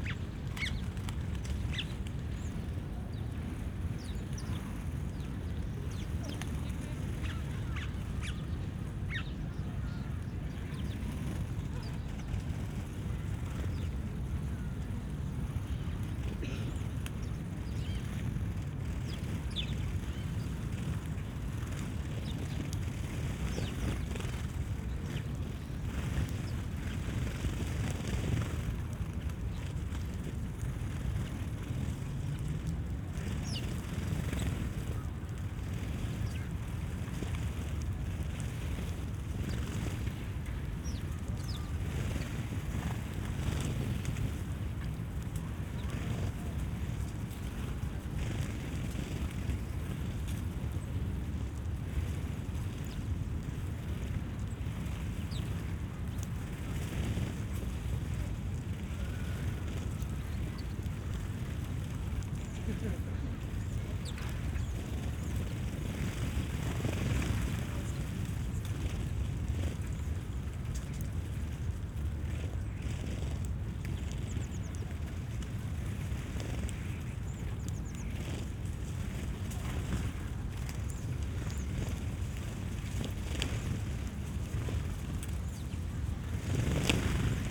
Berlin Tempelhof Birds
dozens of sparrows fluttering on fence, in the bushes, around birdseed, at one of the many little sanctuaries at tempelhof